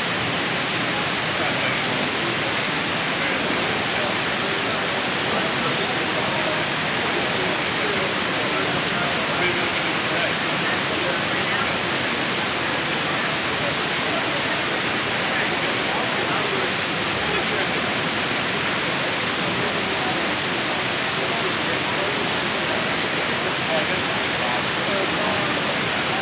E 53rd St, New York, NY, USA - Artificial Waterfall, Paley Park, NYC
Sounds from the artificial waterfall on Paley Park, a small pocket park designed by Robert Zion (1967).
New York, United States, 23 August 2022, ~18:00